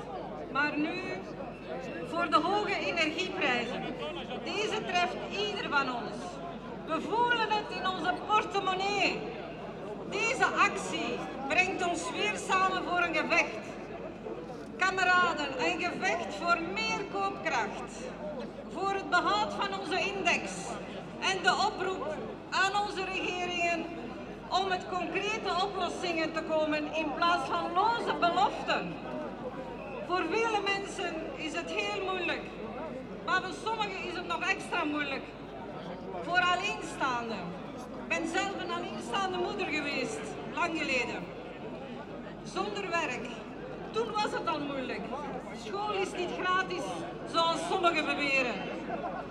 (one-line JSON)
{"title": "Place de la Monnaie, Bruxelles, Belgique - Speeches at the demonstration.", "date": "2022-09-21 10:30:00", "description": "Discours lors de la manifestation syndicale pour le pouvoir d’achat.\nSpeeches at the trade union demonstration for purchasing power.\nTech Note : Sony PCM-M10 internal microphones.", "latitude": "50.85", "longitude": "4.35", "altitude": "31", "timezone": "Europe/Brussels"}